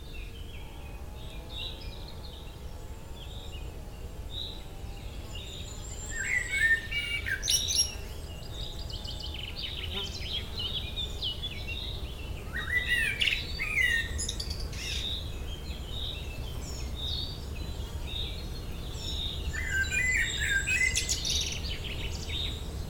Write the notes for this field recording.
On a beautiful sunny morning, the Hurtières forest big calm was immediately seductive. This explains why a recorder was left alone, hanged on a beech branch. This quiet recording includes dominant songs of the eurasian blackcap, the short-toed treecreeper and the yellowhammer (tsi-tsi-tsi-tsi-tsi-tih-tuh). More discreet are the common chiffchaff, the common chaffinch, the dunnock, the blackbird. Unfortunately, there's also planes, but this place was like that. Par un beau matin ensoleillé, le grand calme de la forêt des Hurtières s'est immédiatement annoncé séduisant. C'est de la sorte qu'un enregistreur a été laissé seul, accroché à la branche d'un hêtre. Cet apaisant témoignage sonore comporte les chants dominants de la fauvette à tête noire, le grimpereau des jardins et le bruant jaune (tsi-tsi-tsi-tsi-tsi-tih-tuh). De manière plus discrète, on peut entendre le pouillot véloce, le pinson, l'accenteur mouchet, le merle.